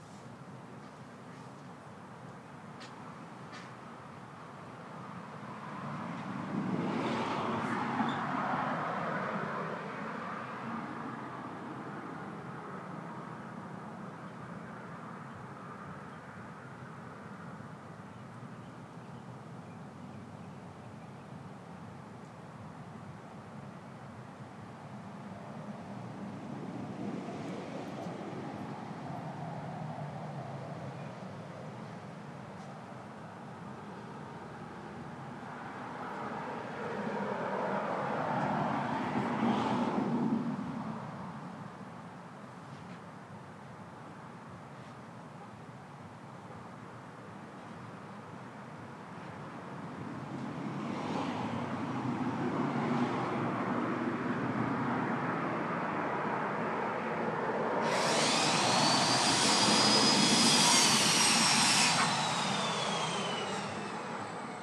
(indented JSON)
{
  "title": "Denson Dr, Austin, TX, USA - Automobiles, Fence Construction",
  "date": "2020-02-15 13:30:00",
  "description": "Recorded on my Zoom H4N.\nForeground is car traffic on a lazy Saturday, along with a fence being constructed nearby. Some emergency vehicles in the distance.",
  "latitude": "30.33",
  "longitude": "-97.72",
  "altitude": "210",
  "timezone": "America/Chicago"
}